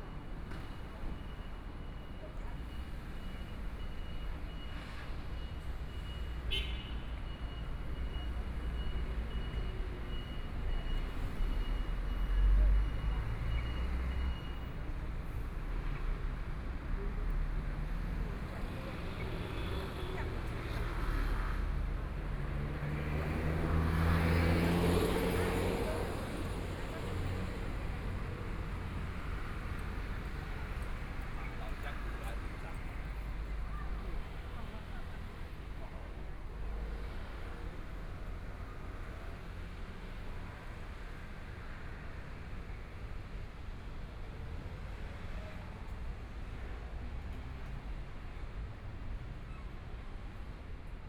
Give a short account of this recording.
Walking on the road （ Linsen N. Rd.）, Traffic Sound, Binaural recordings, Zoom H4n + Soundman OKM II